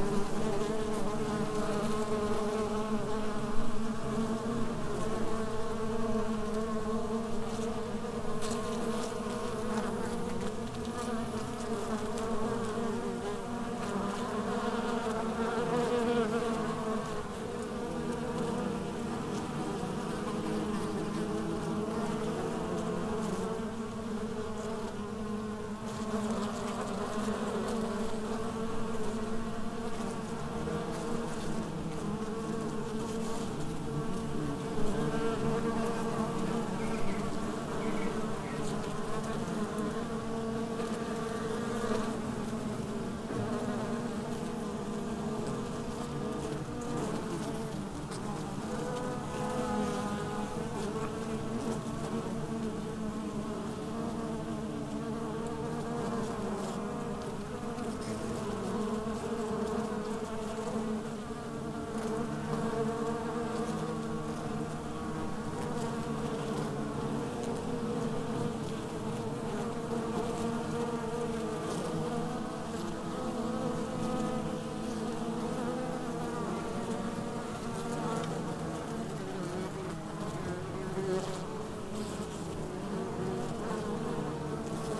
appelboom: bees under a pear tree - KODAMA document
Bees recorded under a pear tree, by Hitoshi Kojo, during the KODAMA residency - September 2009
france, 6 September 2009